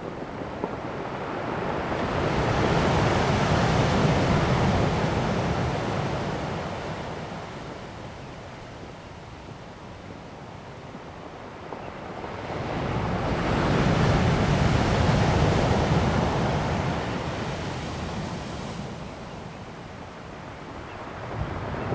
南迴公路南興, Dawu Township - the waves
Sound of the waves, birds sound
Zoom H2N MS+ XY